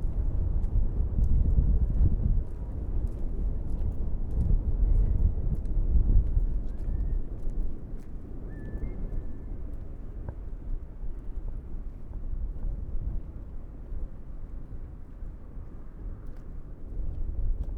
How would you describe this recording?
The sound of the wind, Cold weather, Birds sound, Windy, Zoom H6 MS+Rode NT4